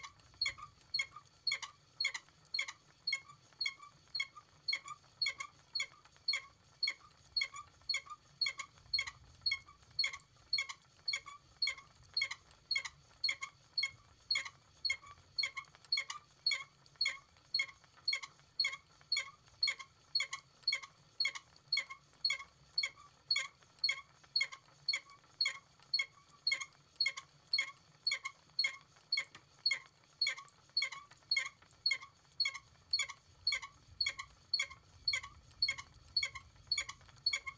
Windermere, Cumbria, UK - Diana's squeaky wheel
This is the sound of Diana spinning Herdwick fleece on her squeaky old wheel, in front of the fire.